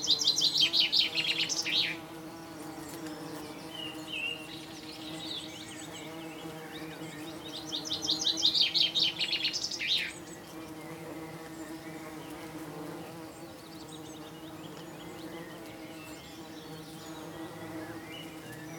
Derrysallagh, Geevagh, Co. Sligo, Ireland - Bees in Fuscia
I was sitting in the sunshine outside when I noticed the fuscia bush was humming with the sound of bees. I mounted the recorder on a large tripod and set it so the microphone was in the flowers surrounded by the bees. I don't remember exactly what time it was but it was as the sun was nearing the horizon.